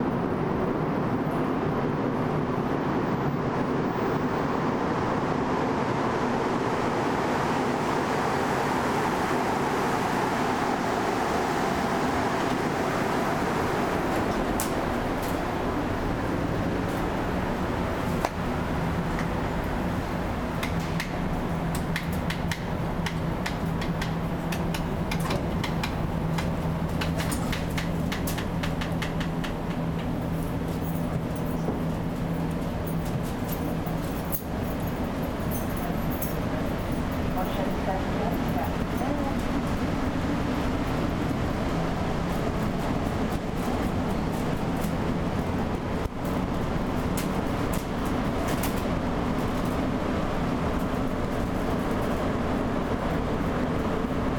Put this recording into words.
equipment used: edirol recorder, a metro ride between Lionel-Groulx station to Place St-Henri station...